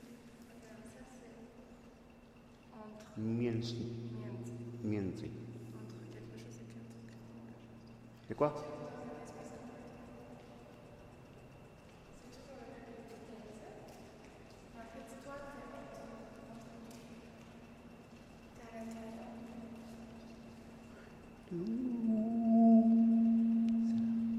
Warszawa-Śródmieście, Varsovie, Pologne - ECHOES museum
In a square room of Ujazdow Castle, Warszawa, with walls, floor & Ceiling made of smooth stone, & (important detail) the top of the walls a little rounded to join the ceiling, here are a few basic exercices of « barehand acoustics ». With fingers snapping, hands clapings & steps taping one can reveal the reverberation & the floating echoe from the center point of the room. With the voice speaking & then singing, one can find its resonnance frequency (around 320Hz here), & almost measure its size by the ear...